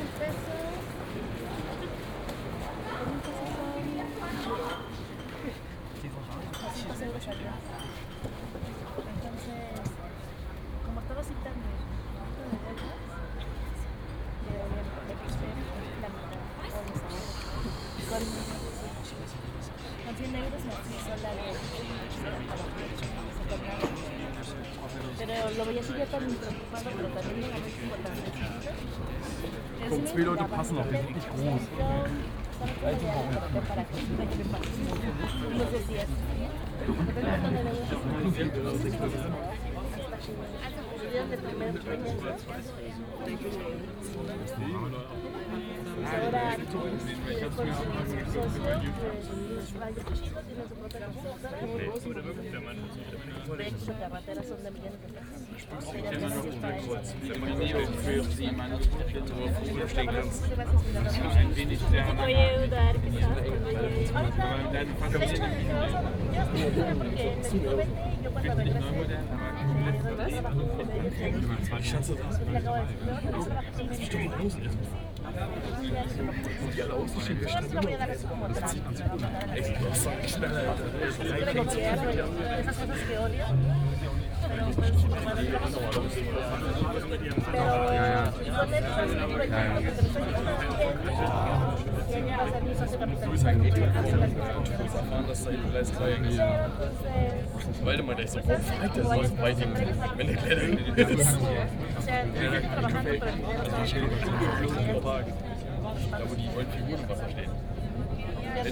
berlin, hallesches tor
weekend crowd waiting for the train at station hallesches tor, station ambience, car filled with people